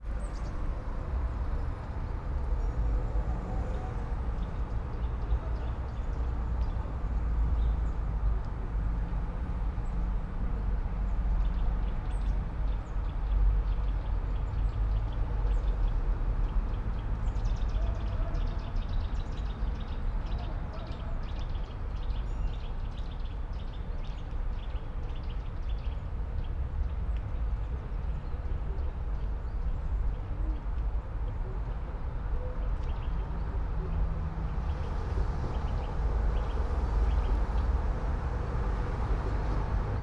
all the mornings of the ... - feb 4 2013 mon
Maribor, Slovenia, 4 February 2013, 08:40